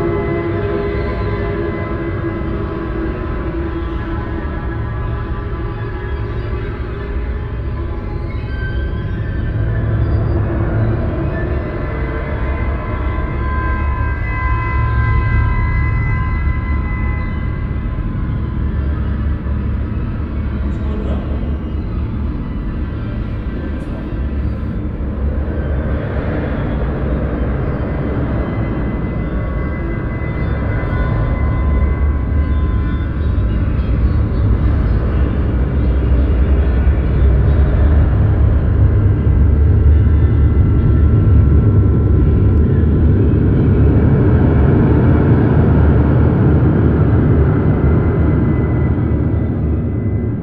Mannesmannufer, Düsseldorf, Deutschland - KIT, exhibition hall, installation sonic states
Inside the main part of the underearth KIT exhibition. 2013.
soundmap nrw - social ambiences, art spaces and topographic field recordings
Düsseldorf, Germany, 9 February 2013, ~14:00